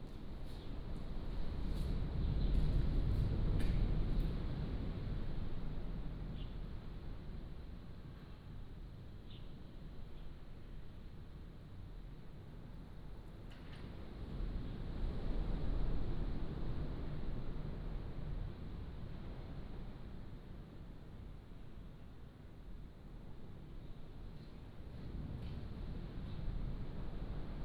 午沙村, Beigan Township - Abandoned waiting room
Abandoned waiting room, Small village, Sound of the waves
馬祖列島 (Lienchiang), 福建省 (Fujian), Mainland - Taiwan Border